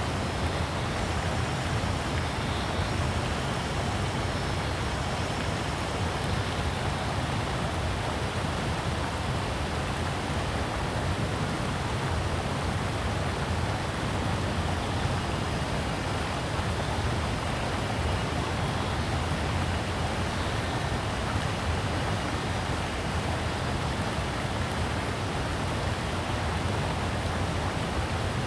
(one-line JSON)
{"title": "TaoMi River, 桃米里 Taiwan - Weir", "date": "2015-06-10 17:31:00", "description": "In the stream, Weir, Cicadas cry\nZoom H2n MS+XY", "latitude": "23.94", "longitude": "120.92", "altitude": "488", "timezone": "Asia/Taipei"}